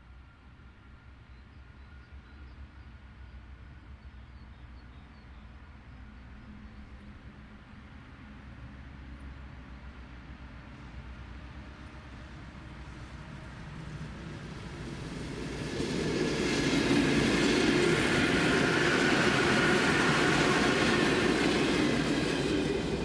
вулиця Торецька, Костянтинівка, Донецька область, Украина - Сквер Тихий - отправление электропоезда
Звуки в сквере. Электропоезд
Kostiantynivka, Donetska oblast, Ukraine, 29 March 2019, 15:50